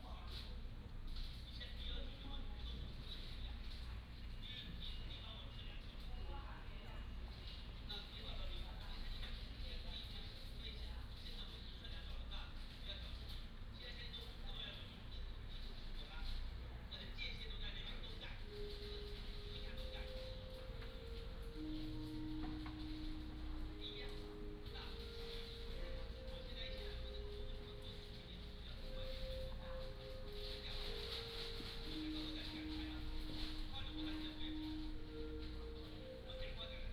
馬祖村, Nangan Township - Small village
Small village
Binaural recordings
Sony PCM D100+ Soundman OKM II